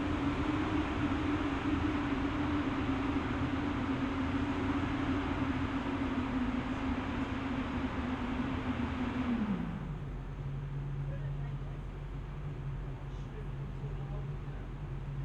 Saturday, noon, Mauerweg (former Berlin wall area), Wohlgemuthstr., demolition of garages, reflections and drone of excavator
(SD702, Audio Technica BP4025)